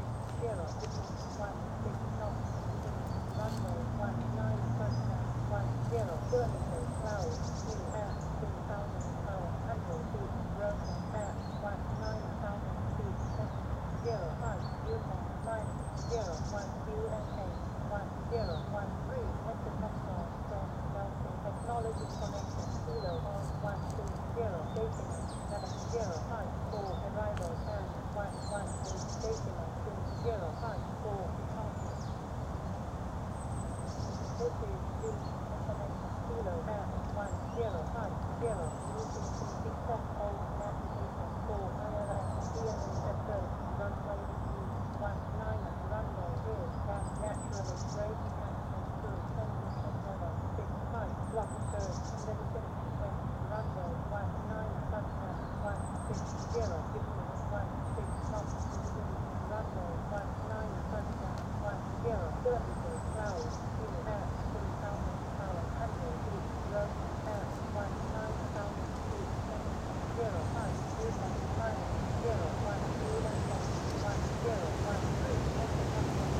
took radioscanner with myself. standing amongst the trees and listening to Vilnius airport information service.
Vilniaus apskritis, Lietuva